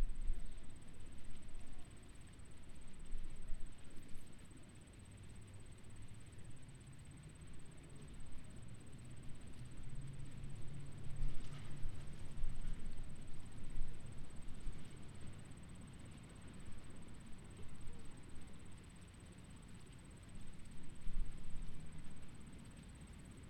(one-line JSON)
{"title": "Bolton Hill, Baltimore, MD, USA - Cohen Plaza - Bush Bug", "date": "2016-09-12 13:25:00", "description": "Recording focused on bugs in a bush between the plaza and street. Audible sounds of people and cars passing, and nearby fountain.", "latitude": "39.31", "longitude": "-76.62", "altitude": "38", "timezone": "America/New_York"}